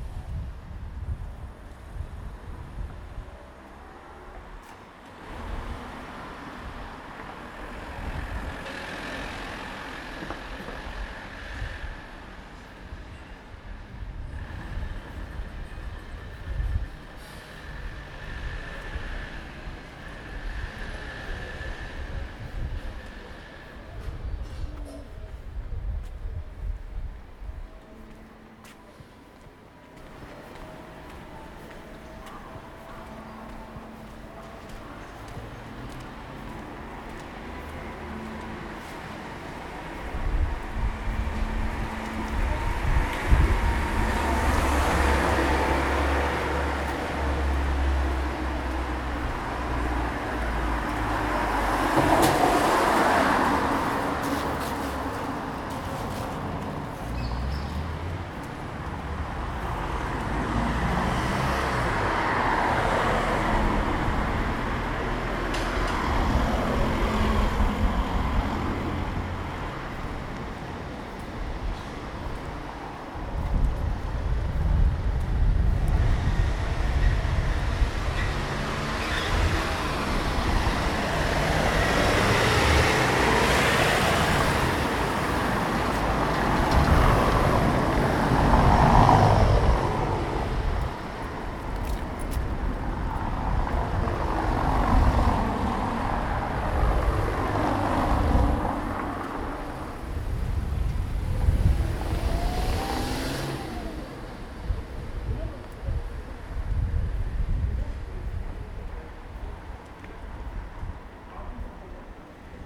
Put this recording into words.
skating, playing, sun, wind, clouds